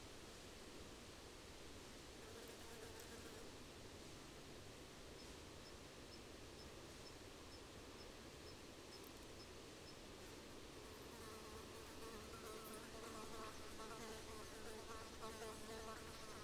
Blue Mountains National Park, NSW, Australia - Leaving my microphones in the Jamison Valley (Early Autumn)
The first 40 minutes or so of a 12 and a half hour recording in the Jamison Valley. It only got to around 13C in the night so I was wrong about the temperature. And the valley was full of thick mist from around 9pm to 7am so I don't think the (almost) full moon would of made much of a difference.
Also, I did actually record Wallabies munching on the undergrowth, no squeaking trees and Tawny Frogmouth's at the same time though!
Recorded with a pair of AT4022's into a Tascam DR-680.
Federal Pass, New South Wales, Australia, 8 March 2015